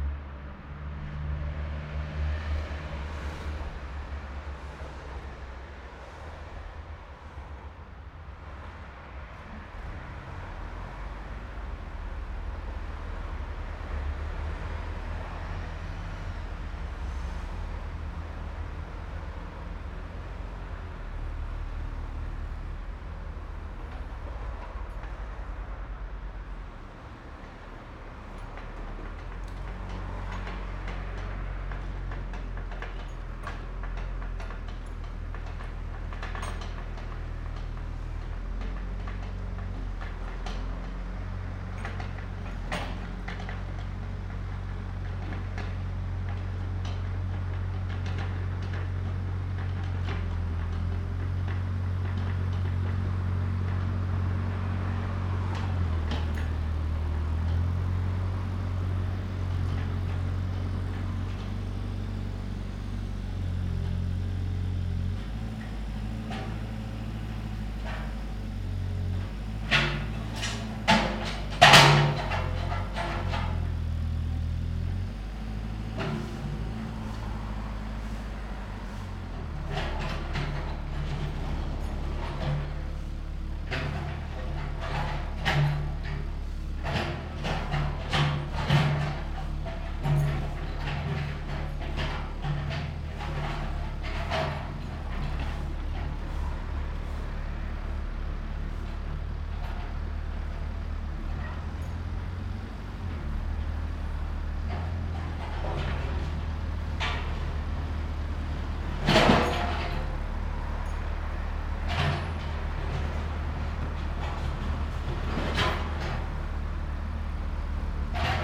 Nice weather for binaural recordings.
The infinite variety of noises is infinite.
Rijeka, Croatia, February 2013